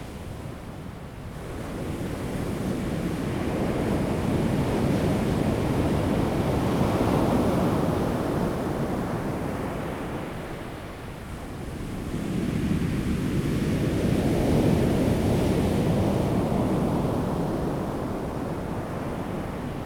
台東縣大武鄉, Taiwan - Sound of the waves
at the beach, Sound of the waves
Dawu Township, 台9線145號, 28 March 2018, 09:01